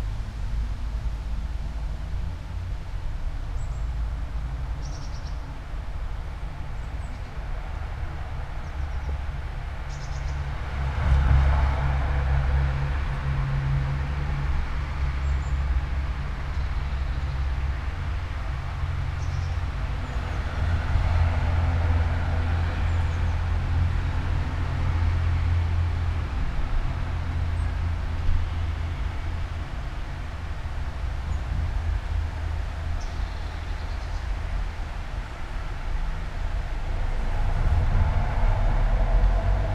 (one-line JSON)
{"title": "all the mornings of the ... - sept 1 2013 sunday", "date": "2013-09-01 09:01:00", "latitude": "46.56", "longitude": "15.65", "altitude": "285", "timezone": "Europe/Ljubljana"}